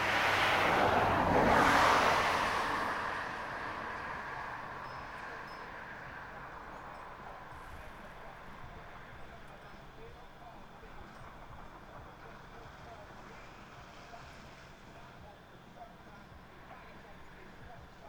{"title": "Punat, SkiLift, Mužak", "description": "SkiLift with soundscape", "latitude": "45.04", "longitude": "14.62", "altitude": "3", "timezone": "Europe/Berlin"}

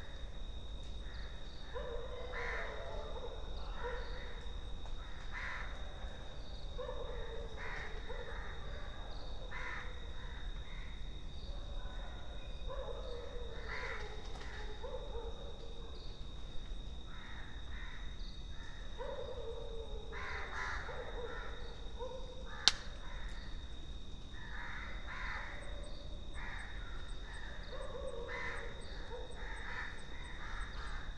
{"date": "2022-02-26", "description": "00:00 Film and Television Institute, Pune, India - back garden ambience\noperating artist: Sukanta Majumdar", "latitude": "18.51", "longitude": "73.83", "altitude": "596", "timezone": "Asia/Kolkata"}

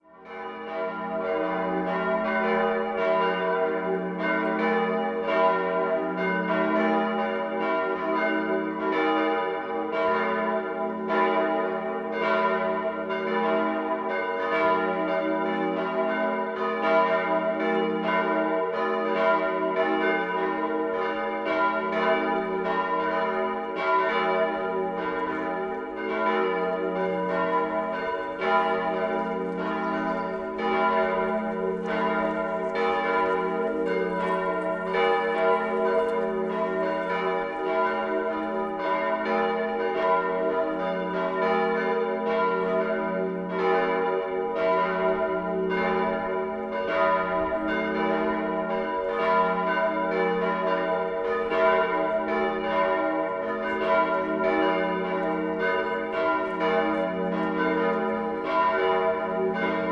{"title": "berlin, nansen/pflügerstr. - sunday evening churchbells", "date": "2011-03-27 18:00:00", "description": "sunday evening churchbells from nearby reuterplatz", "latitude": "52.49", "longitude": "13.43", "altitude": "41", "timezone": "Europe/Berlin"}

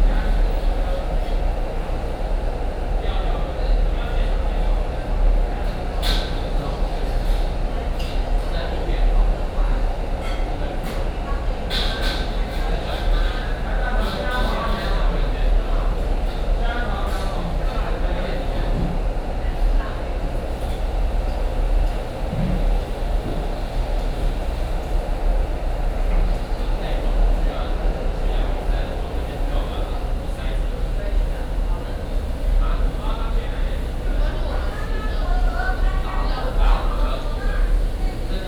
{"title": "Sec., Zhongshan N. Rd., Tamsui Dist. - In the restaurant", "date": "2016-12-23 11:42:00", "description": "Inside the restaurant, The sound of cooking", "latitude": "25.18", "longitude": "121.44", "altitude": "50", "timezone": "GMT+1"}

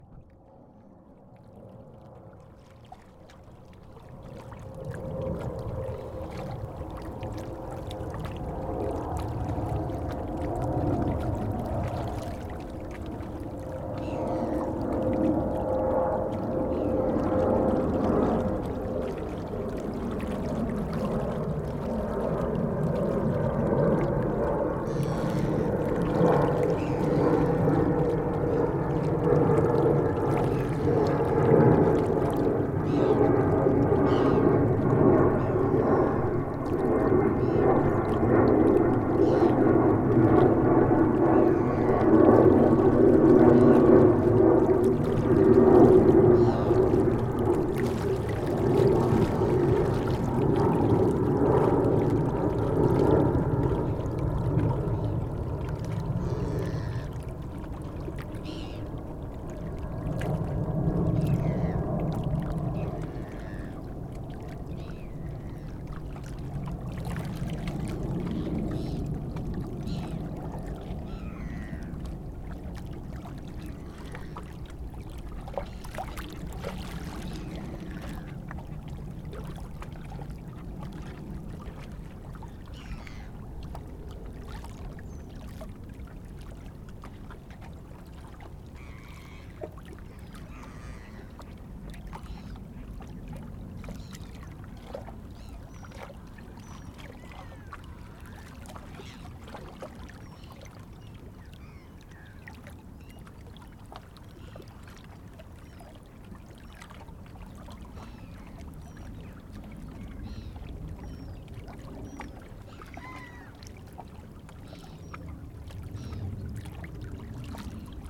{"title": "Petit Port, Aix-les-Bains, France - Charters des neiges", "date": "2017-12-16 10:35:00", "description": "Près du lac du Bourget au bout d'une digue du Petit Port d'Aix-les-Bains les clapotis de l'eau dans les rochers, passages d'avions venant de l'aéroport de Chambéry. C'est l'hiver les touristes viennent skier en Savoie.", "latitude": "45.69", "longitude": "5.89", "altitude": "232", "timezone": "Europe/Paris"}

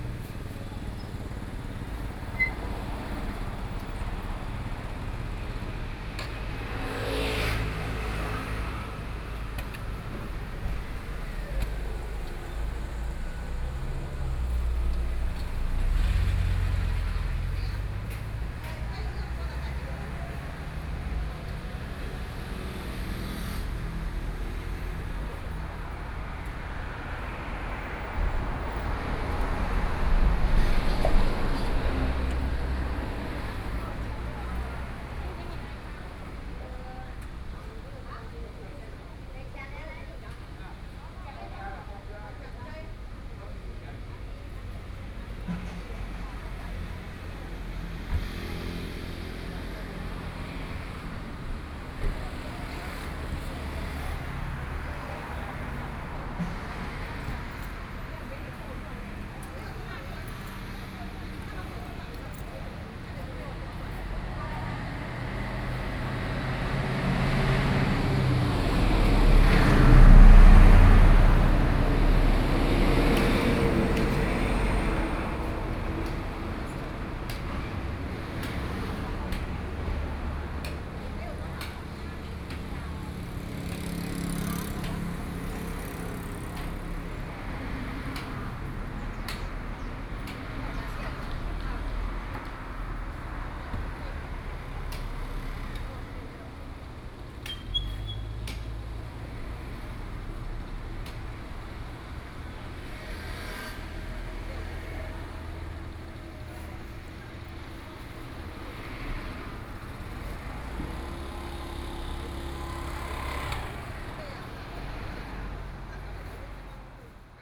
{"title": "Zhongxin Rd., Luye Township - Next to the Market", "date": "2014-09-07 08:52:00", "description": "Next to the Market, Traffic Sound, Small villages", "latitude": "22.91", "longitude": "121.14", "altitude": "146", "timezone": "Asia/Taipei"}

